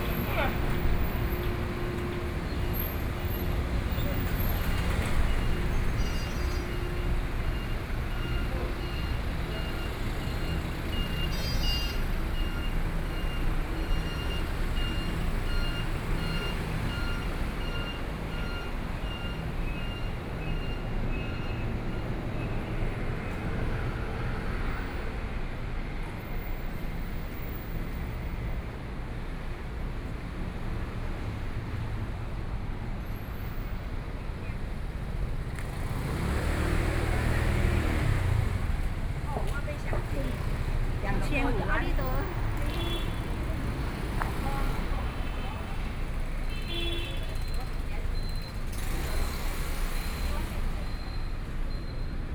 Jianguo 3rd Rd., Sanmin Dist. - walking on the Road
walking on the Road, The main road, Traffic Sound, Pedestrians, Various shops voices
16 May, 11:09